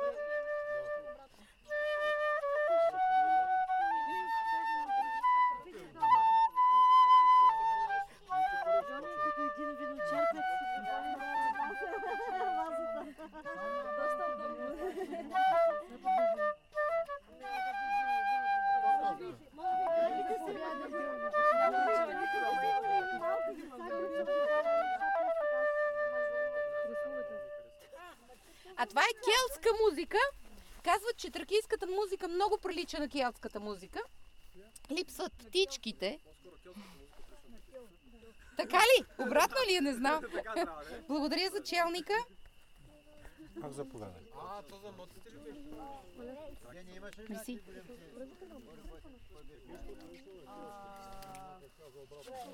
Kazanlak, Bulgaria - Thracians and flute music
A group of local bulgarians camping in the forest surrounding the lake. They talk about thracian heritage in this lands - their music and rituals. One lady plays the flute (music from Debussy), as an example somehow close to what thracians had. There is a camping fire and you can hear the night sounds of the crickets.
27 August 2016